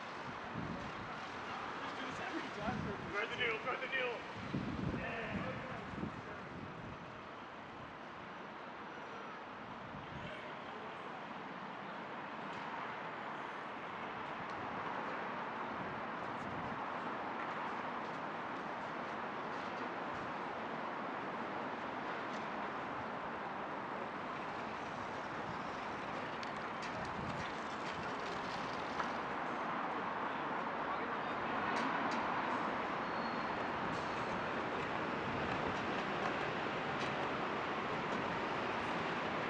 Queens Square, Belfast, UK - Albert Memorial Clock
Recording of pedestrians, vehicles, cyclists, and skateboarders in windy conditions.
County Antrim, Northern Ireland, United Kingdom, 27 March 2021